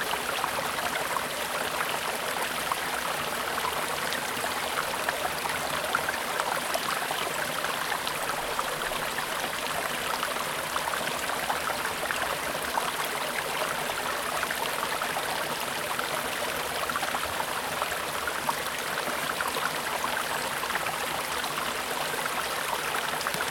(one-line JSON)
{"title": "Coleton Fishacre - 2012-09-19 Coleton Fishacre stream", "date": "2012-09-19 12:45:00", "description": "Recorded in 2012. A small stream running through the grounds of Coleton Fishacre, with the sounds of occasional inquisitive insects.", "latitude": "50.35", "longitude": "-3.53", "altitude": "87", "timezone": "Europe/London"}